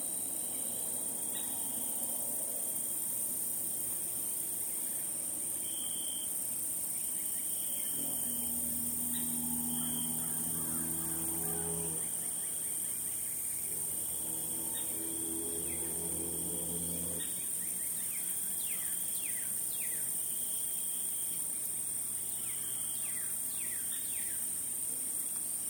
Recorded at sundown from the balcony of a ranch home in Ledbetter, TX. Recorded with a Marantz PMD661 and a stereo pair of DPA 4060's.